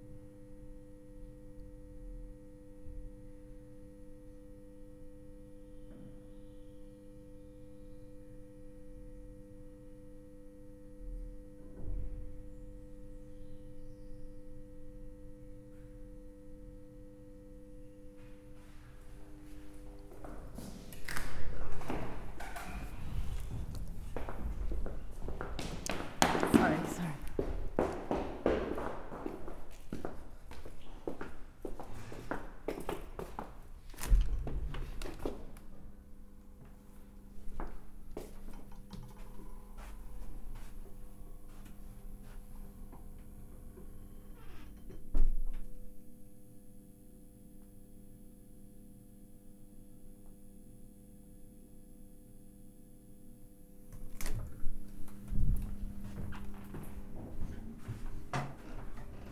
Headington, Oxford, Oxford, UK - Going into the office...
Several times I have enjoyed the series of sounds I encounter on my way into the office at Headington Hill Hall, from the outdoor open space, via the pitched hum of the entry hall, to the melodic spiral staircase at the end. Recorded with a Roland R-05.
Oxfordshire, UK, April 2016